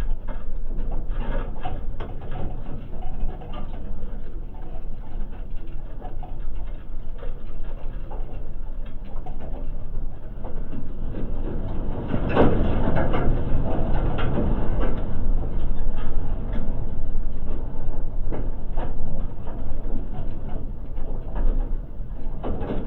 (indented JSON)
{
  "title": "Utena, Lithuania half abandoned building fence",
  "date": "2021-09-12 15:50:00",
  "description": "Industrial zone. Half abandoned warehouse. Metallic fence, contact microphones.",
  "latitude": "55.50",
  "longitude": "25.64",
  "altitude": "130",
  "timezone": "Europe/Vilnius"
}